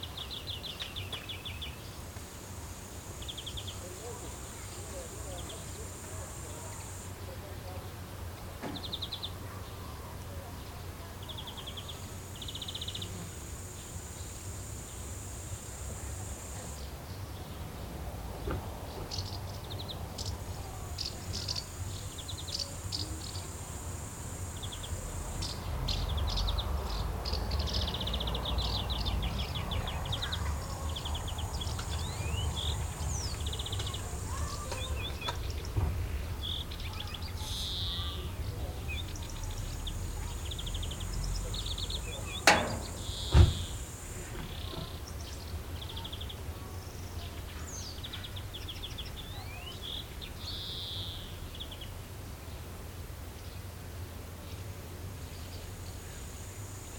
Viraksaare, Estonia - evening sounds around summerhouses
birds, voices, door slams, tin roof snaps, bushcrickets
Paide vald, Järva County, Estonia, 10 July, ~21:00